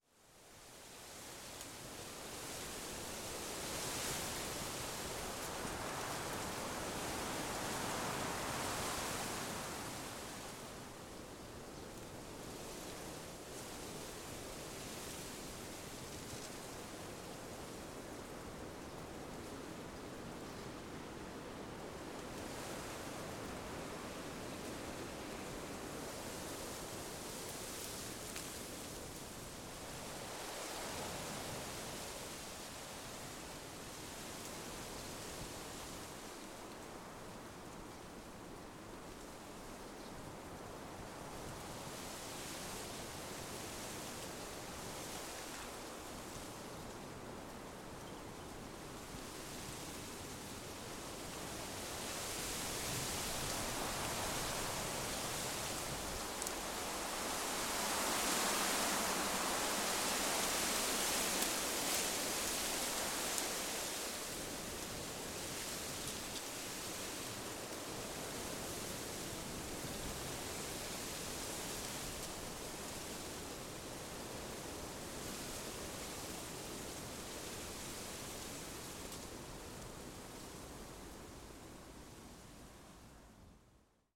Après-midi. Vent moyen sur des plants de bambou.
Evening. Medium wind on bambou plants.
April 2019.
/Zoom h5 internal xy mic
Bretagne, France métropolitaine, France, 22 April